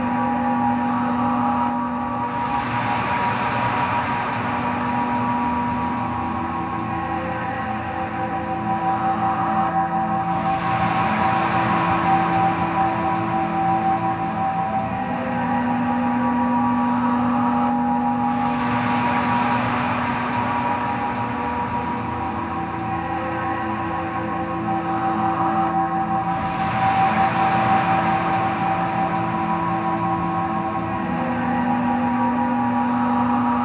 Govalle, Austin, TX, USA - Container Room Recording - Samplers Running Amok
Room recording in a container studio with natural reverb and faint cicadas. Made with a Marantz PMD661 & a pair of DPA 4060s.